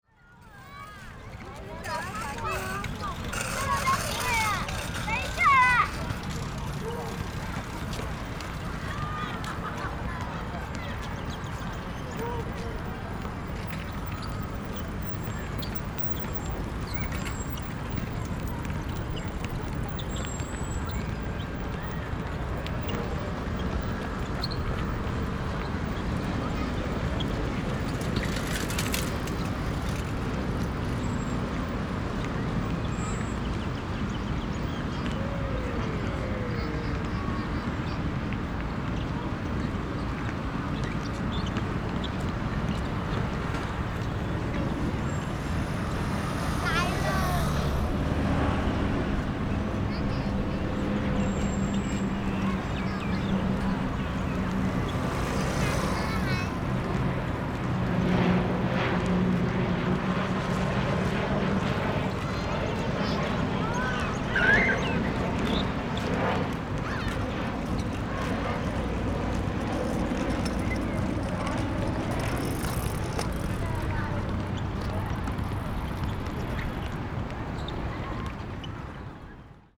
Erchong Floodway, New Taipei City - Park entrance
kids, basketball, Traffic Noise, dog, Aircraft flying through, Rode NT4+Zoom H4n